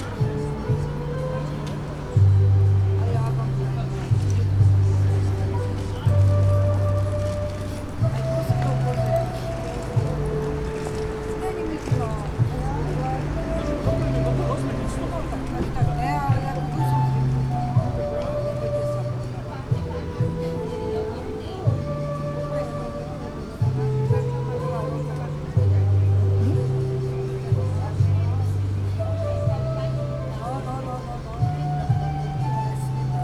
Bratislava, Ružinov, Slovenská republika - Miletička
Soleado, celé pečené